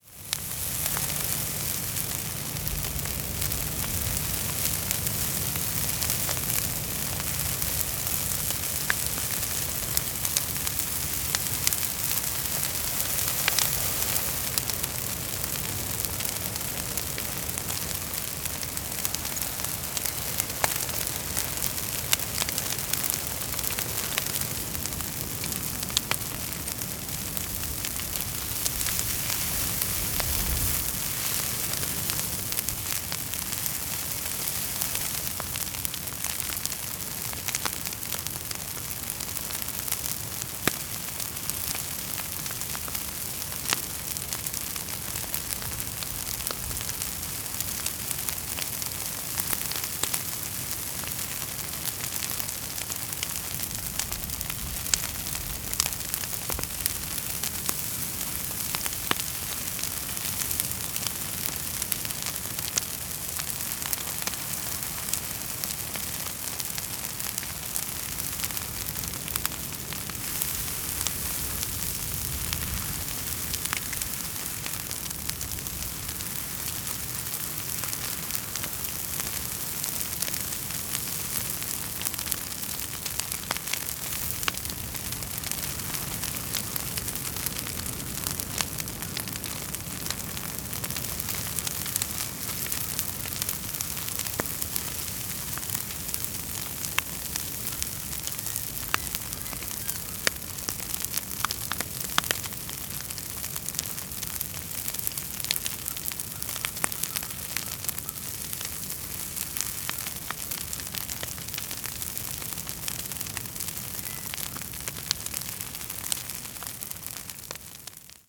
Machar municipal landfill, Canada - Refuse pile burning
Huge bonfire of wood scraps and brush burning aggressively in the middle of the landfill clearing. Gulls and crows heard toward the end. Zoom H2n with EQ and levels postprocessing. Some wind.